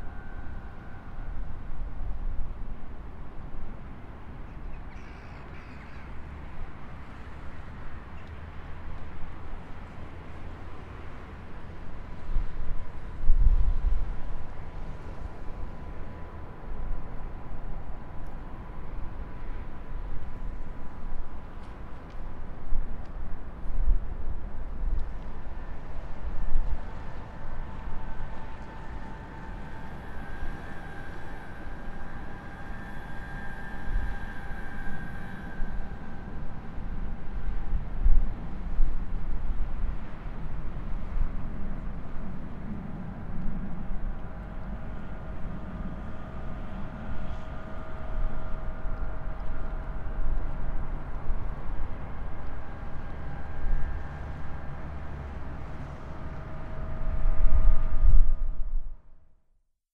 The Wind Harps, Townparks, Co. Leitrim, Ireland - The Sunken Hum Broadcast 38 - Eerie are the Wind Harps and Passing is the Traffic
The public wind harps designed by Mark Garry with the traffic of the N4 passing between Sligo and Dublin.
County Leitrim, Connacht, Republic of Ireland